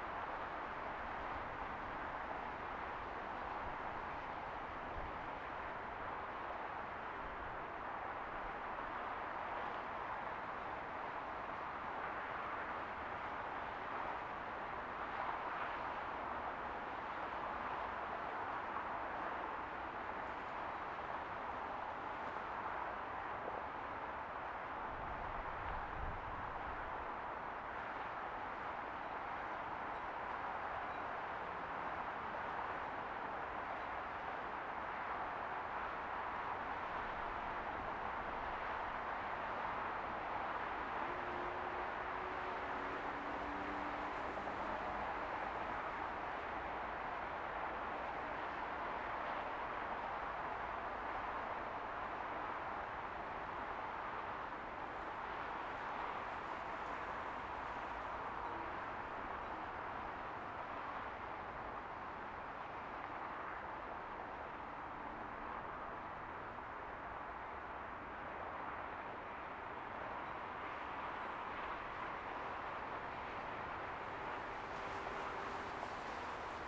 Bossen Park - MSP 12L Arrivals
Arriving aircraft landing on runway 12L at Minneapolis/St Paul International Airport recorded from the parking lot at Bossen Park